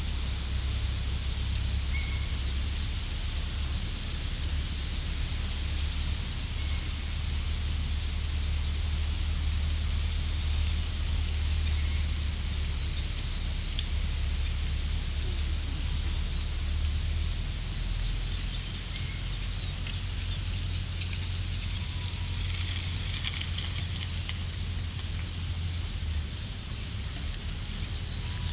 Värati, Estonia - grasshoppers, some voices of people, passing car on gravel road, dog
grasshoppers, people, car, gravel road, dog, bicycle
Pärnumaa, Estonia, July 2010